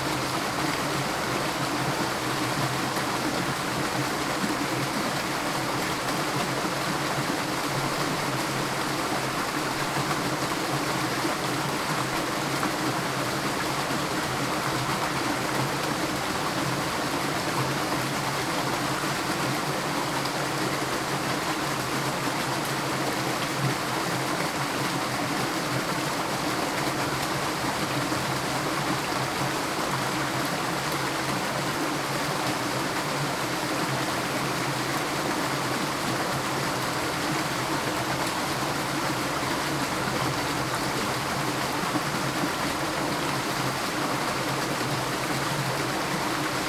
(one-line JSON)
{"title": "Gutsbezirk Reinhardswald, Deutschland - Steinköhlerpfad Mühlbach 02", "date": "2012-06-05 16:48:00", "latitude": "51.42", "longitude": "9.57", "altitude": "289", "timezone": "Europe/Berlin"}